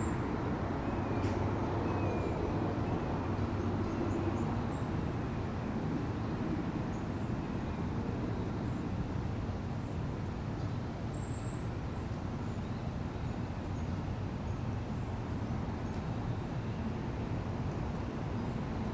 Mill Creek Pond, Alpharetta, GA, USA - Neighborhood Pond
Recording at a pond in a neighborhood park. The area is surrounded by traffic, which bleeds heavily into the recording. Birds are heard throughout. There were lots of geese, especially towards the end of the recording. There are frogs off to the right, but they're difficult to hear due to being masked by louder sounds. A group of people walked down to the gazebo next to the recorder around halfway through the session.
The recording audibly clipped a couple times when the geese started calling right in front of the recording rig. This was captured with a low cut in order to remove some of the traffic rumble.
[Tascam Dr-100mkiii & Primo EM-272 Omni mics]
Georgia, United States, February 2022